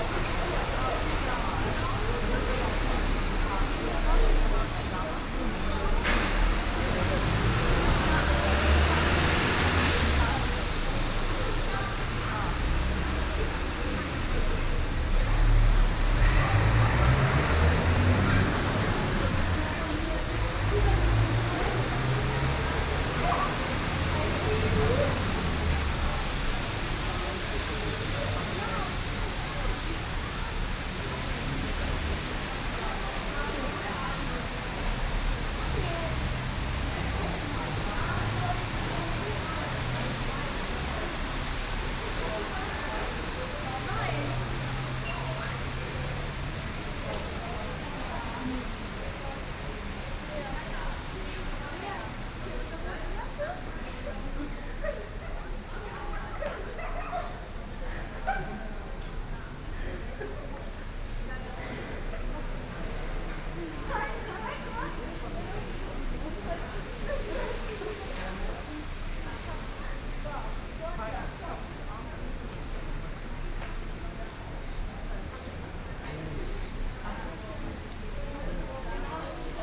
Löhrrondell, Bus Station, Koblenz, Deutschland - Löhrrondell 8
Binaural recording of the square. Eight of several recordings to describe the square acoustically. People on the phone or talking, waiting for the bus on a friday afternoon .
Koblenz, Germany, 19 May